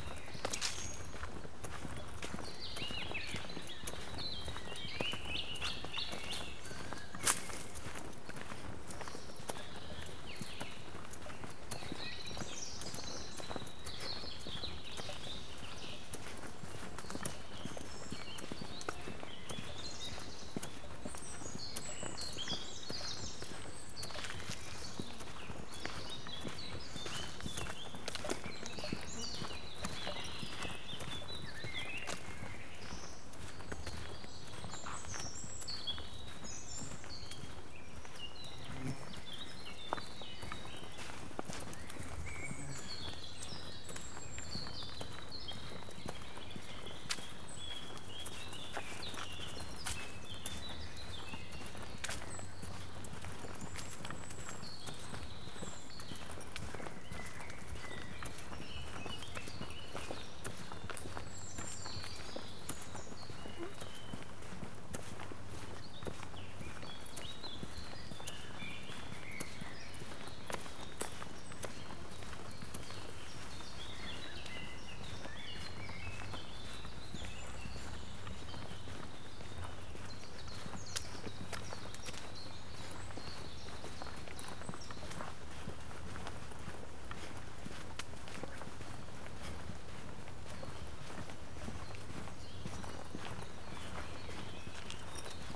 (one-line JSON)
{"title": "Morasko nature reserve, forest path - firm walk", "date": "2013-07-05 17:32:00", "description": "it wasn't possible to record while standing still due to swarms of mosquitoes and other bugs. so forest ambience recorded while working fast and swinging arms to distract the creatures.", "latitude": "52.48", "longitude": "16.90", "altitude": "135", "timezone": "Europe/Warsaw"}